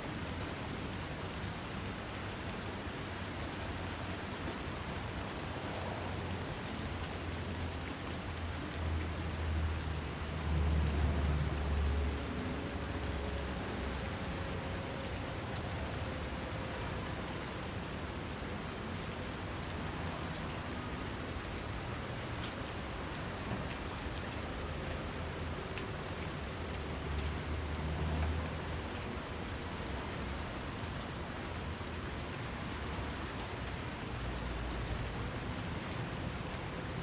Ladies Mile - Ladies Mile Vs Weedwacker